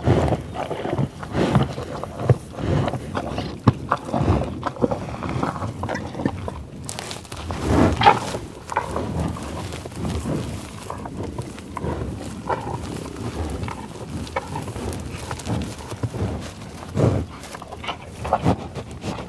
Tall Grass Prairie - Buffalos in the tall-grass prairie in Oklahoma, growling, grunting, sniffing and eating some food
At the end of the day, the buffalos came to eat some food the worker of the park gave to them with his truck. The bisons came really close to us.